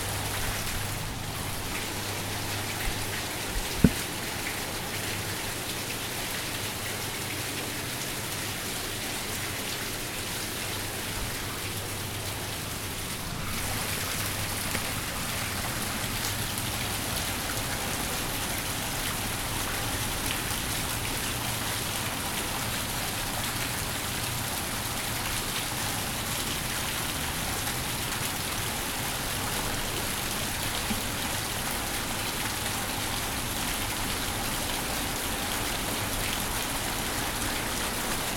Chicago Riverwalk, Chicago, IL, USA - Vietnam Memorial Fountain